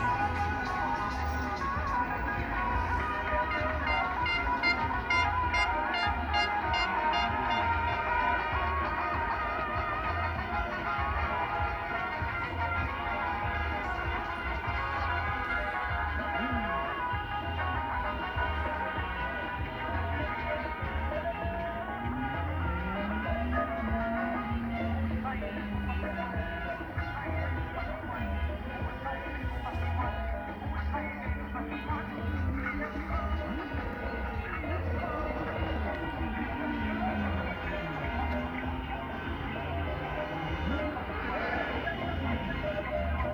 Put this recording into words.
ON FAIT LE MAXIMUM DE BRUIT POUR SOUTENIR UN CANDIDAT